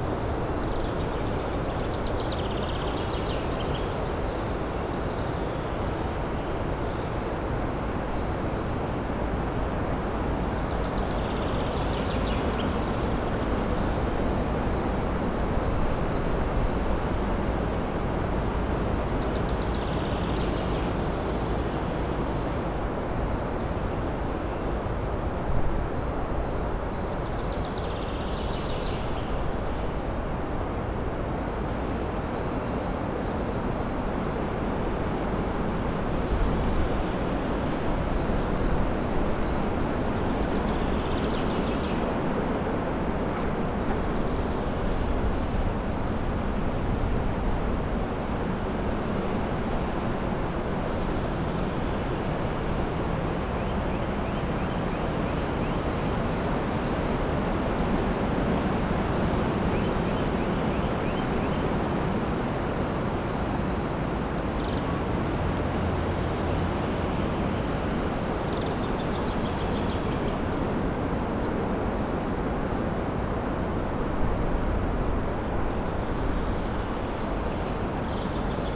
Morasko Nature Reserve, forest clearing - april pressure
forest clearing submerged in the sound of strong wind whirring in the trees. withered leaves being blown around by the stronger gusts. some unsettling creaks nearby, thought it's a wild boar for a second.
Morasko Meteorite Nature Reserve project
Suchy Las, Poland, 13 April 2015